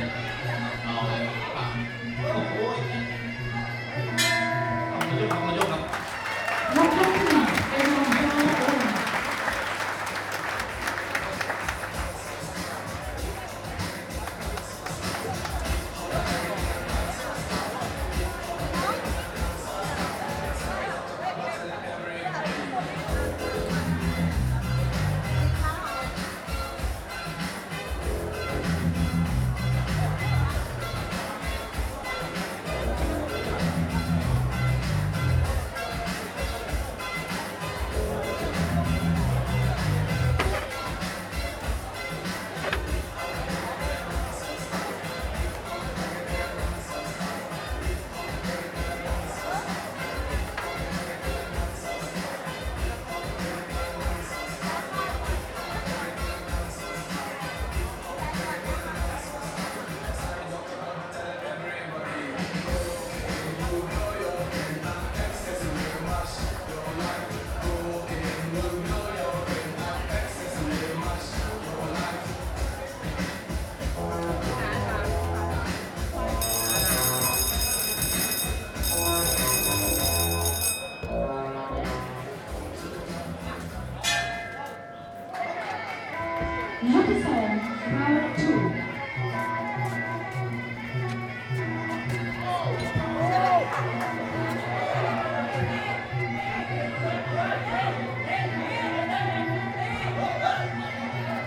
Mun Mueang Rd, Tambon Si Phum, Amphoe Mueang Chiang Mai, Chang Wat Chiang Mai, Thailand - Muay Thai fights
Muay Thai fights in Chieng Mai Boxing Stadium part one - first fight
จังหวัดเชียงใหม่, ประเทศไทย, 7 January 2017, ~20:00